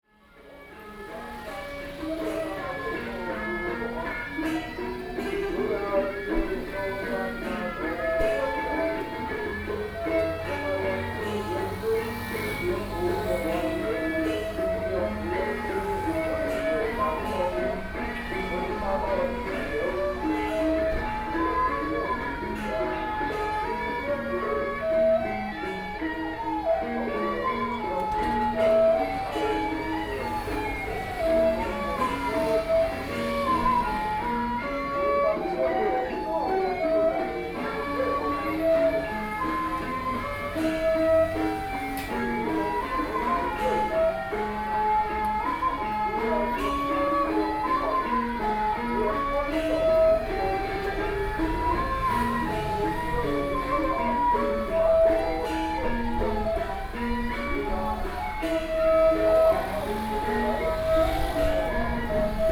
Temple Traditional Ceremony, Zoom H4n+ Soundman OKM II
Taipei City, Taiwan, August 2013